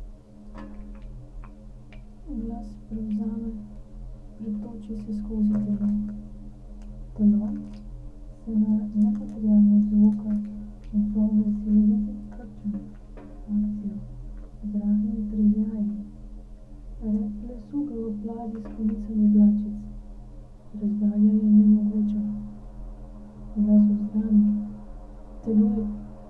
quarry, Marušići, Croatia - void voices - stony chambers of exploitation - borehole
winter, slow walk around while reading from strips of paper
Istra, Croatia